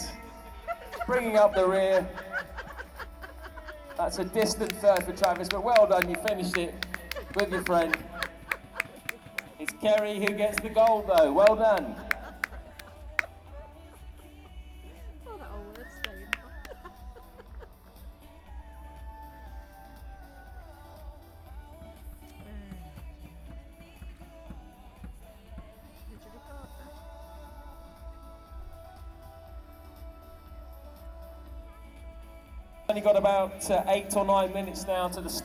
Mascot race ... part of a ProAm T20 Cricket final ... some small family involvement ... open lavalier mics clipped to base ball cap ...
Northampton, UK